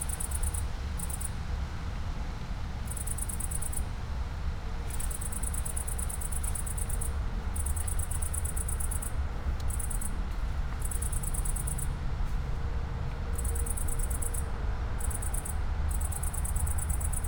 poplar woods, river Drava areas, Maribor - early autumn cicada
dry poplar leaves and branches, cicada on acacia tree
2 September 2013, ~19:00